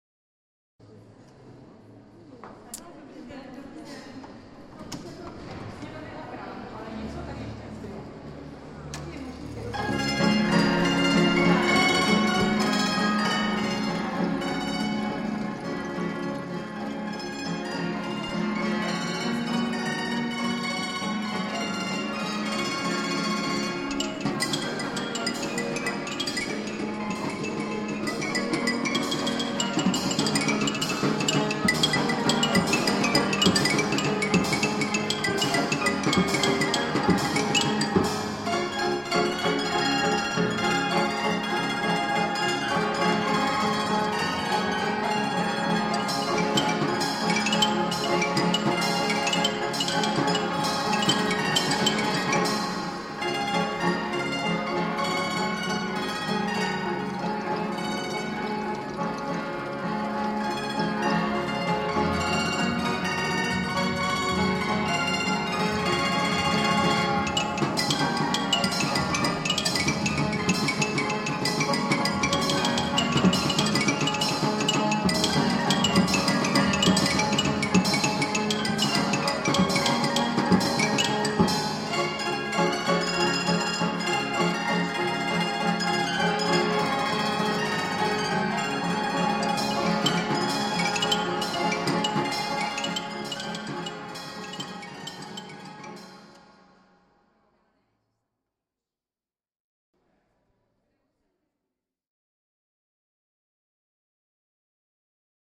museum of czech music
mechanical music box in the exposition
Prague 5-Malá Strana, Czech Republic, 19 April 2010